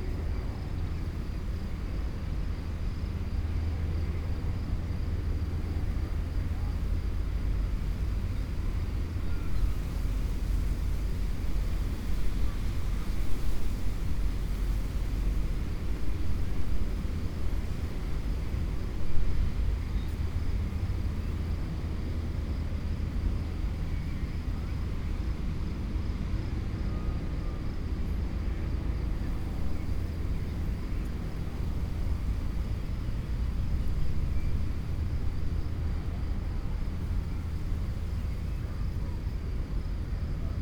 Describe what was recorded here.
flowing grass, city traffic from distance